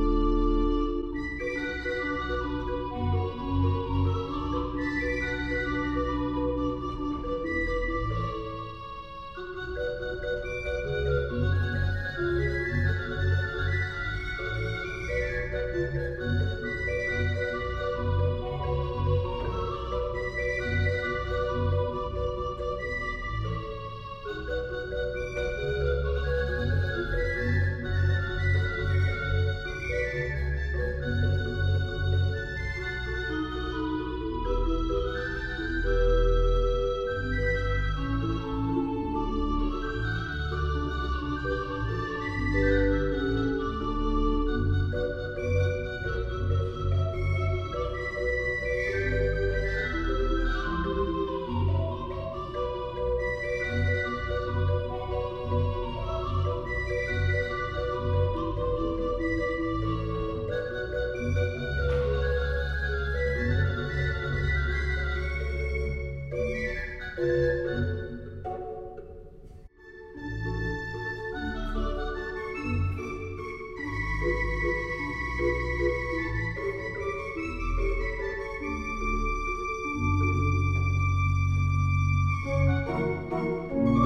Fläsch, Schweiz - Orgelspiel in der Kirche
Bei einer Gesangsprobe. Der Organist Konrad Weiss improvisiert in einer Pause auf der kleinen Kirchenorgel. Wie so oft drücke ich zu spät auf den Rec Knopf.
Juni 1998